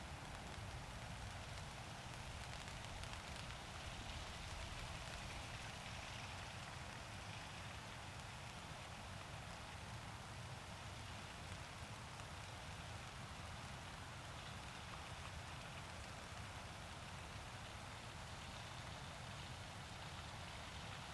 Rain and wind in our tent - Right next to the river Caldew
Recorded using LOM Mikro USI's and a Sony PCM-A10.
North West England, England, United Kingdom, 8 September 2020, 20:34